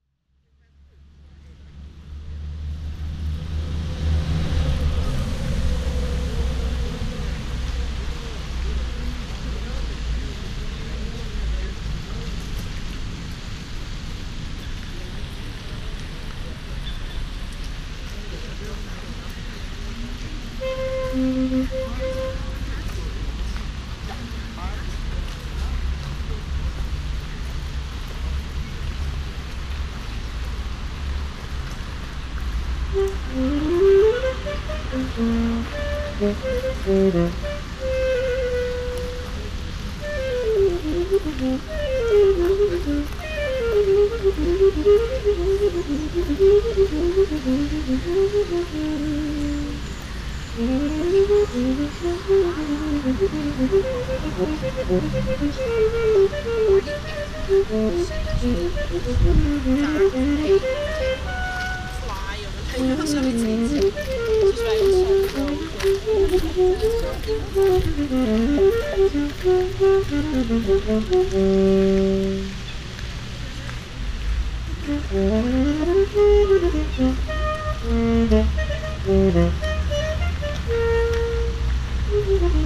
Saxophone player, The Hague
Saxophone player, Lange Vijverberg, The Hague, with traffic, pedestrians, and the Hogvijver fountain in the background. A little windy unfortunately. Binaural recording.